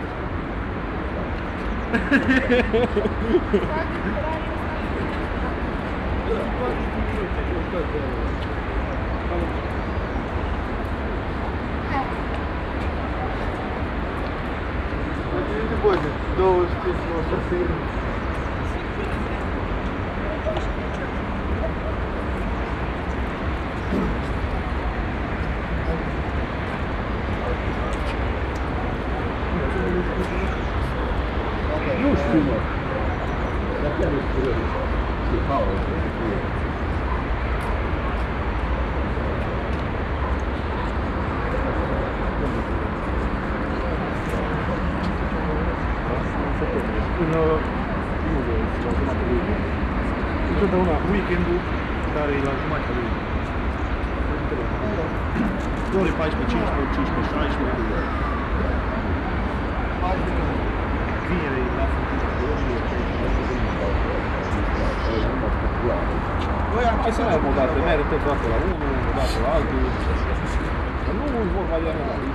Cetatuia Park, Cluj-Napoca, Rumänien - Cluj, hillside monument view
On a hill nearby a socialistic monument viewing the city. The noise scape of the downhill city and some visitors talking.
Soundmap Fortess Hill/ Cetatuia - topographic field recordings, sound art installations and social ambiences
Cluj-Napoca, Romania, November 2012